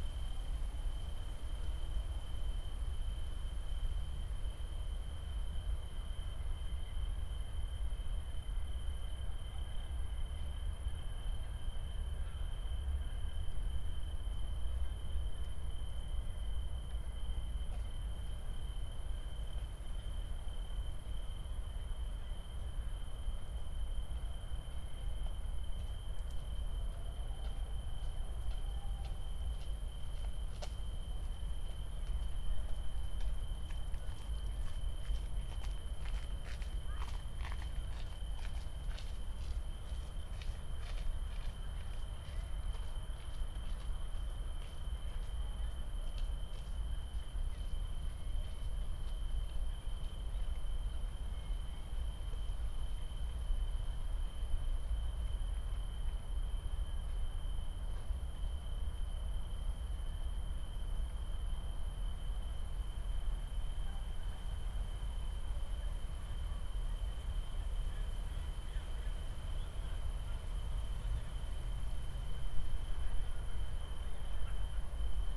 Stadtgarten, Köln - trains and tree crickets
radio aporee ::: field radio - an ongoing experiment and exploration of affective geographies and new practices in sound art and radio.
(Tascam iXJ2 / iphone, Primo EM172)